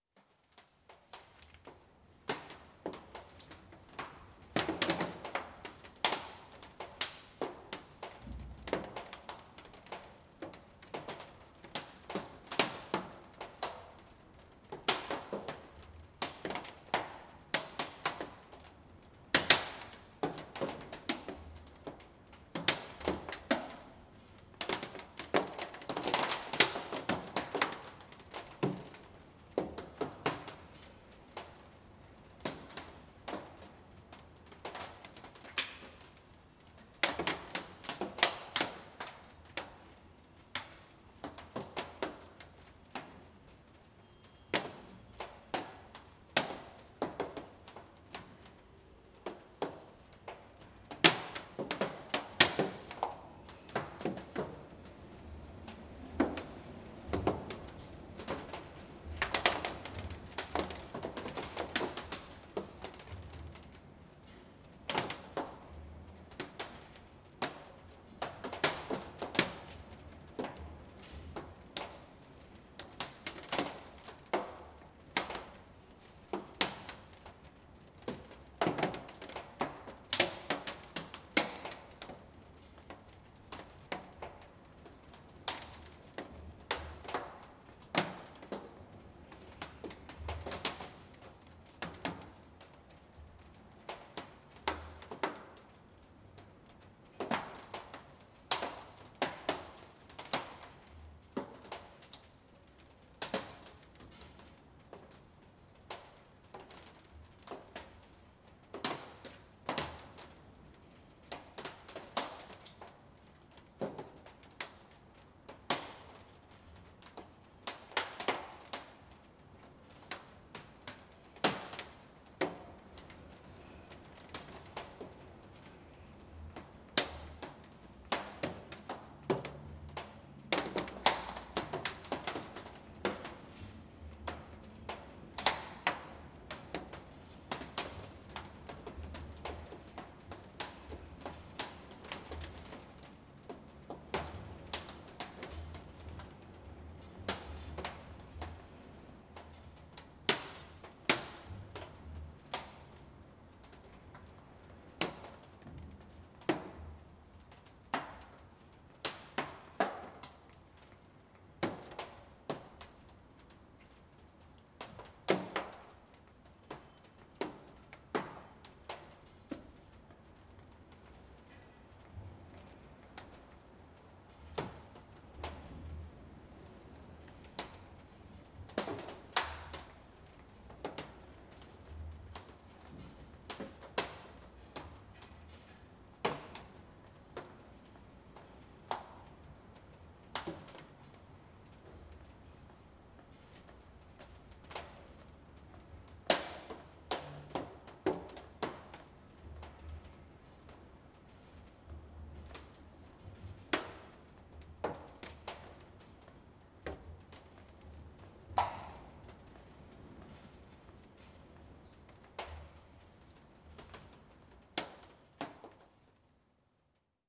Plazuela de Rosanes, Sueca, Valencia, Spain - Rain dripping on plastic
Water drops falling onto plastic after rain, in the interior courtyard of an apartment block.
Recorded on a Zoom H2n XY mics.